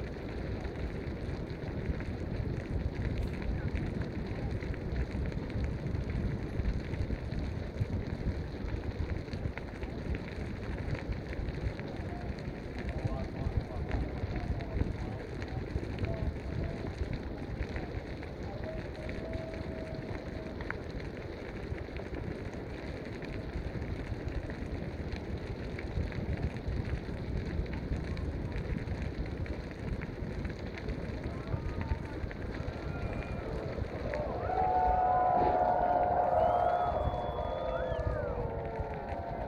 Black Rock City, Nevada, USA - Temple of Direction Burn
Perspective inside the safety perimeter at the extremely hot burning of the Temple of Direction at the culmination of the Burning Man event 2019. Recorded in ambisonic B Format on a Twirling 720 Lite mic and Samsung S9 android smartphone, downmixed into binaural
31 August 2019, 20:04